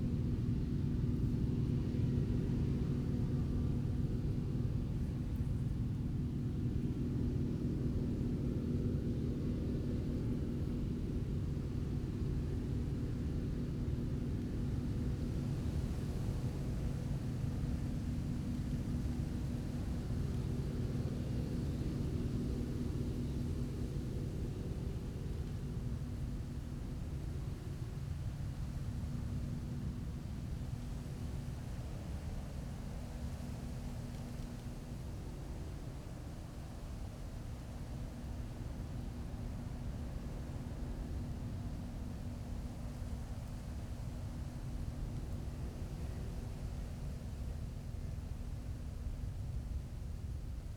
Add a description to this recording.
Combine harvesting ... movement from tractors and trailers ... open lavalier mics clipped to sandwich box ...